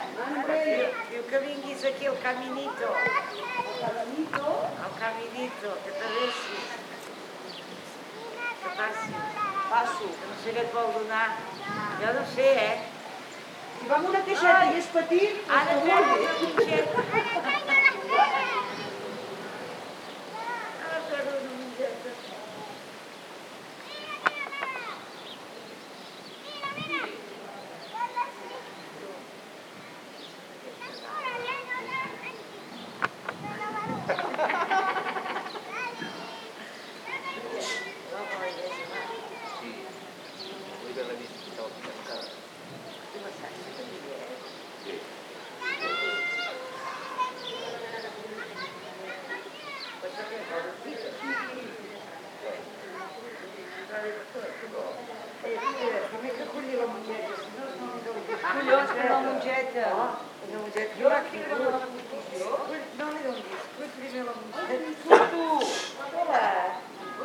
{
  "title": "SBG, Cal Xico - Sábado tarde",
  "date": "2011-07-16 19:20:00",
  "description": "Niños jugando y los vecinos de Cal Xico charlando a voces en sus jardines.",
  "latitude": "41.98",
  "longitude": "2.17",
  "altitude": "848",
  "timezone": "Europe/Madrid"
}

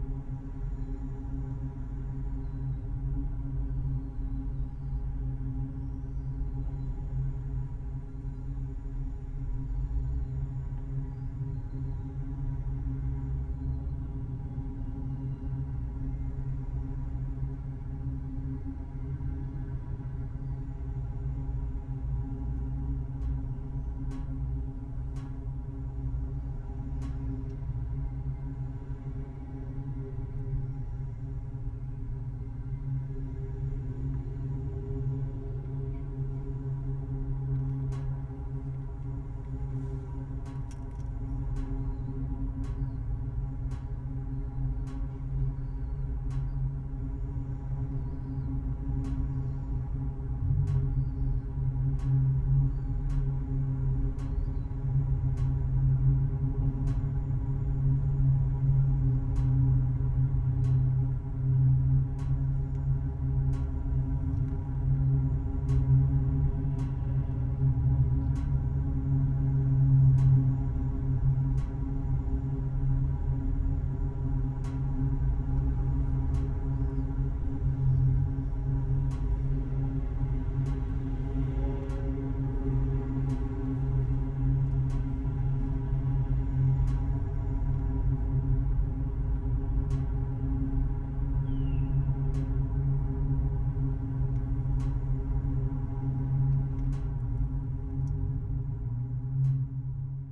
{
  "title": "Utena, Lithuania, town in tubes",
  "date": "2014-07-18 14:50:00",
  "description": "small microphones placed in two found long and tiny tubes. droney, reverberating town...",
  "latitude": "55.51",
  "longitude": "25.60",
  "altitude": "110",
  "timezone": "Europe/Vilnius"
}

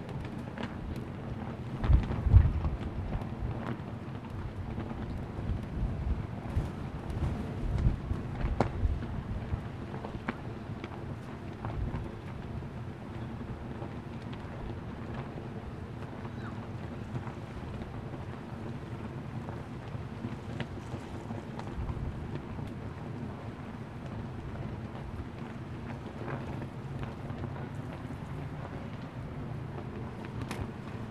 {
  "title": "Sharjah - United Arab Emirates - Very large flag in the wind and rain",
  "date": "2017-02-08 14:30:00",
  "description": "Another windy day in UAE so I recorded the 7th largest flagpole in the world (123 metres). Zoom H4N (sadly became broken on this trip!)",
  "latitude": "25.35",
  "longitude": "55.38",
  "timezone": "Asia/Dubai"
}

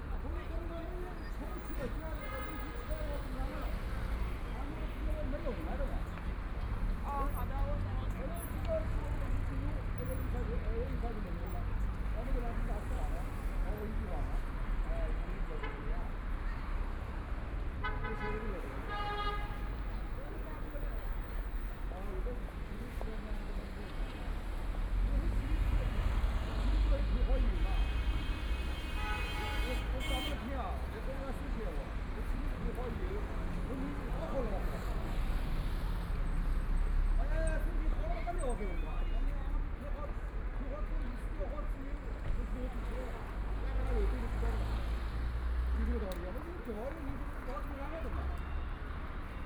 {
  "title": "Jiujiang Road, Shanghai - in the corner",
  "date": "2013-12-02 12:25:00",
  "description": "Intersection corner, The crowd at the intersection, Traffic Sound, Binaural recordings, Zoom H6+ Soundman OKM II",
  "latitude": "31.24",
  "longitude": "121.48",
  "altitude": "35",
  "timezone": "Asia/Shanghai"
}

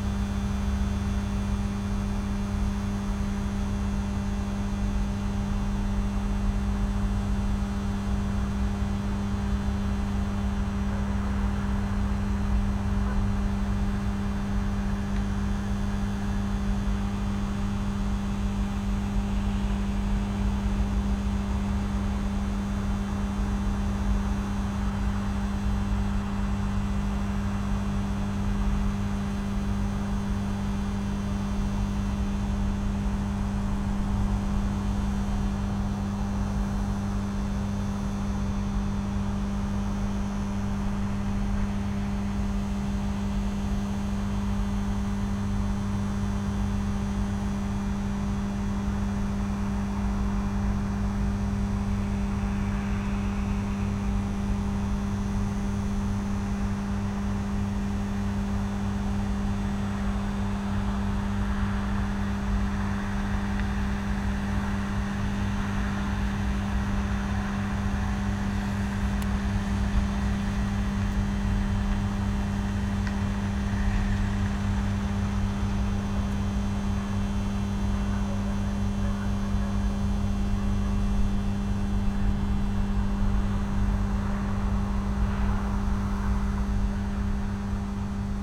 {
  "title": "Utena, Lithuania, at electrical substation",
  "date": "2021-11-16 18:50:00",
  "description": "Low buzz of electrical substation transformers",
  "latitude": "55.51",
  "longitude": "25.62",
  "altitude": "117",
  "timezone": "Europe/Vilnius"
}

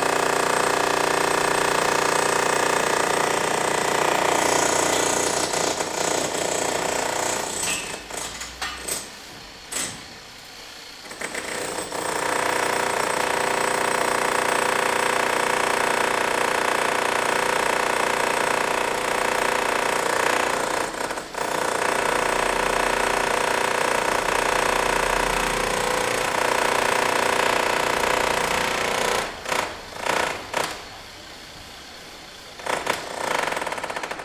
{
  "title": "breite str., fassade - worker with drill hammer",
  "date": "2009-01-26 15:00:00",
  "description": "26.01.2009 15:00, arbeiter mit bohrhammer, renovierung der hausfassade / worker with drill hammer, renovation of house front",
  "latitude": "50.94",
  "longitude": "6.95",
  "altitude": "55",
  "timezone": "Europe/Berlin"
}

